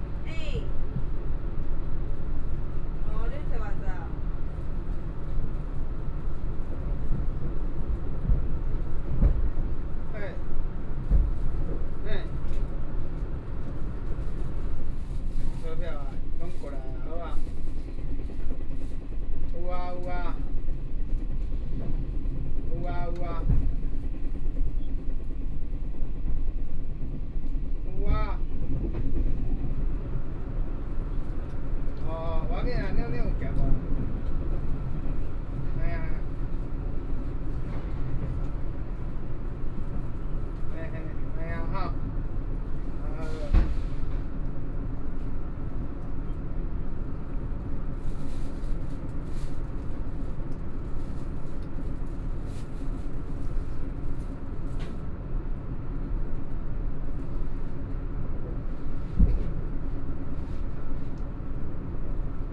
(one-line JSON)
{"title": "Zhubei, Taiwan - Chu-Kuang Express", "date": "2013-09-24 19:03:00", "description": "from Hsinchu Station to Zhubei Station, Sony PCM D50 + Soundman OKM II", "latitude": "24.83", "longitude": "121.00", "altitude": "24", "timezone": "Asia/Taipei"}